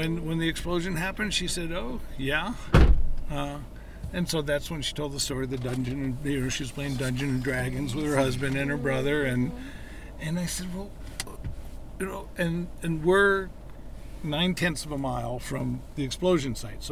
Windsor, CO, USA - Fracking Tour
A group of journalists visits a neighborhood built beside oil fracking wells.
9 November 2018